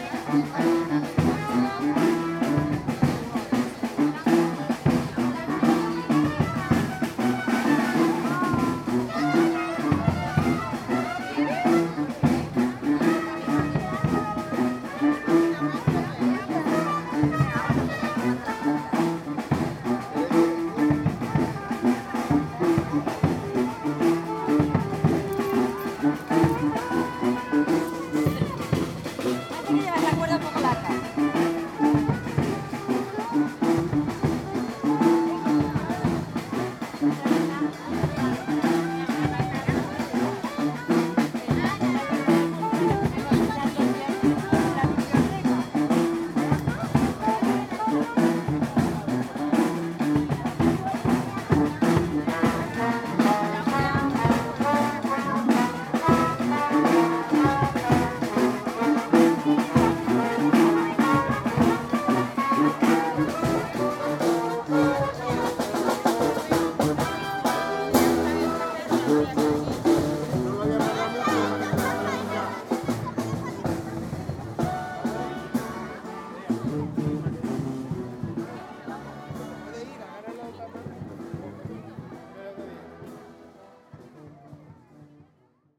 Sevilla, Provinz Sevilla, Spanien - Sevilla, street parade
At a street parade during Big Bang Festival Sevilla. The sound of the belgium street orchestra Hop Frog performing in the public space.
international city sounds - topographic field recordings and social ambiences
Sevilla, Spain